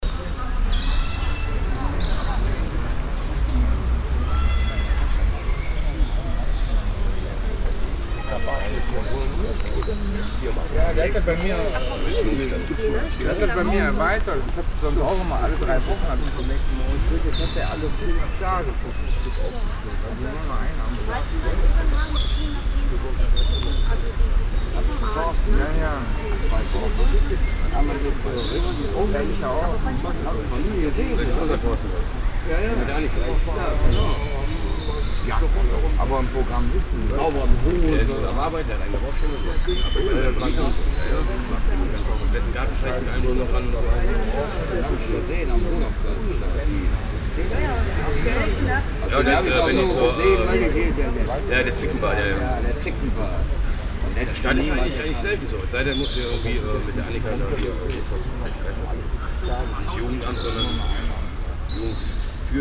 {
  "title": "cologne, brüsseler platz, at the benches",
  "date": "2008-06-26 18:27:00",
  "description": "conversations of people that sit on benches at noon and drink beer\nsoundmap nrw - social ambiences - sound in public spaces - in & outdoor nearfield recordings",
  "latitude": "50.94",
  "longitude": "6.93",
  "altitude": "59",
  "timezone": "Europe/Berlin"
}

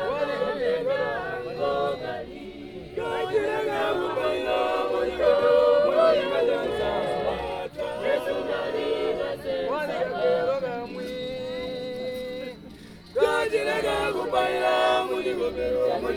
{
  "title": "Sinazongwe, Zambia - Voices singing in the dark...",
  "date": "2018-07-28 18:35:00",
  "description": "walking home in the evening from Zongwe FM studio at Sinazongwe Primary, i hear singing by many voices in the dim light... i come closer and linger for a moment among the groups of young people... there had been a choir rehearsal at the Adventist church... now choir folk is still lingering in small groups continuing with their singing...",
  "latitude": "-17.25",
  "longitude": "27.45",
  "altitude": "496",
  "timezone": "Africa/Lusaka"
}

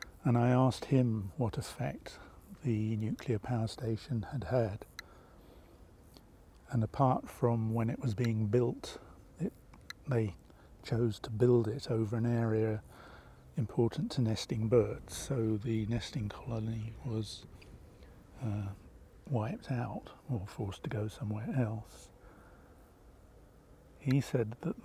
{
  "title": "Nuclear power stories: Geiger counter bleeps, light at night, WXCF+WP Romney Marsh, UK - Nuclear power stories: Geiger counter bleeps, light at night",
  "date": "2021-07-24 17:02:00",
  "description": "I was interested to measure the radioactivity in the vicinity of the nuclear power station. It turned out that the Geiger counter gave a lower reading (12 cnts/min) here than at home in London or Berlin (20cnts/min). At night the power station is lit like a huge illuminated ship in the darkness. This light has had impacts on the local wildlife.",
  "latitude": "50.92",
  "longitude": "0.97",
  "altitude": "4",
  "timezone": "Europe/London"
}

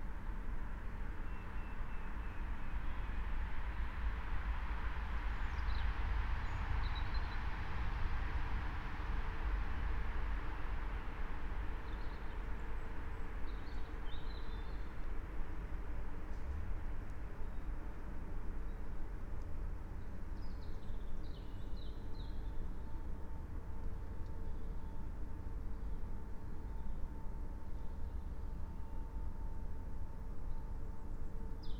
{
  "date": "2022-04-26 21:16:00",
  "description": "21:16 Lingen, Emsland - forest ambience near nuclear facilities",
  "latitude": "52.48",
  "longitude": "7.32",
  "altitude": "49",
  "timezone": "Europe/Berlin"
}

